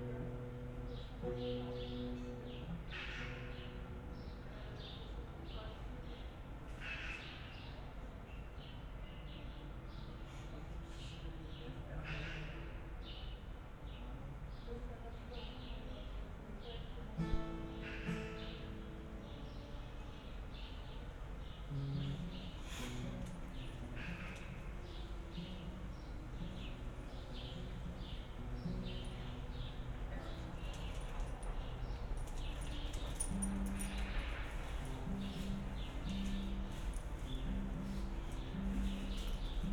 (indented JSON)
{
  "title": "Berlin Bürknerstr., backyard window - summer afternoon, dog, musician",
  "date": "2013-07-09 16:30:00",
  "description": "a musician is practising, neighbor's dog is strolling around, summer afternoon ambience\n(SD702, Audio Technica BP4025)",
  "latitude": "52.49",
  "longitude": "13.42",
  "altitude": "45",
  "timezone": "Europe/Berlin"
}